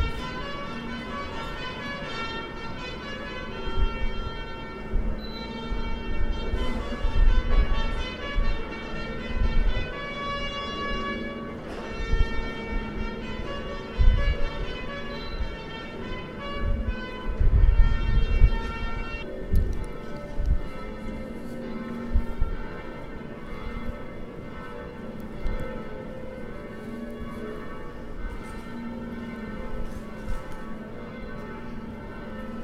Church Matky Bozi pred Tynem
Walking the corridor from Oldtown square in noon direction the church. Tycho de Brahe came back from the trip recently.